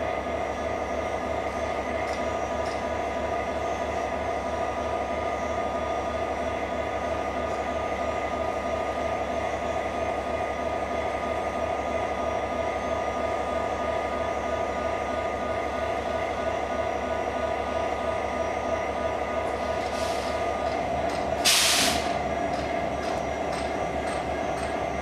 Istanbul - Berlin: Relocomotivication in Ruse Station
The express train Istanbul - Bucuresti in the main station of Ruse, waiting for its romanian locomotive to draw it across the Danube. A few moments of pause on a long voyage.